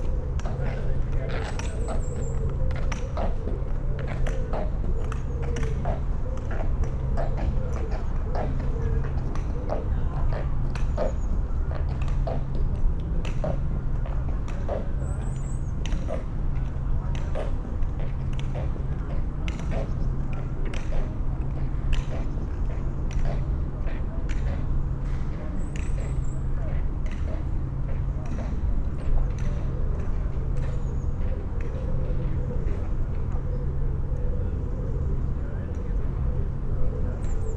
A warm, quiet afternoon in the Priory Park behind the theatres. I sit outside the rear entrance to the foyer concentrating on the passing voices, birds, a jet plane, and children playing far in the distance.
MixPre 6 II with 2 Sennheiser MKH8020s on the table in front of me at head height while I am sitting.
England, United Kingdom